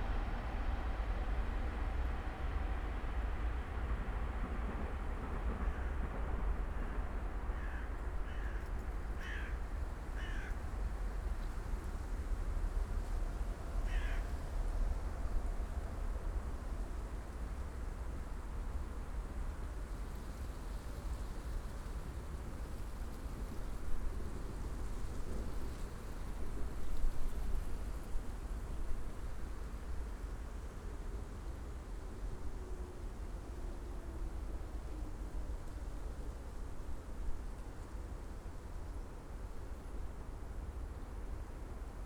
Berlin, Friedhof Baumschulenweg, cemetery, ambience on an Winter Sunday early afternoon
(Sony PCM D50, DPA4060)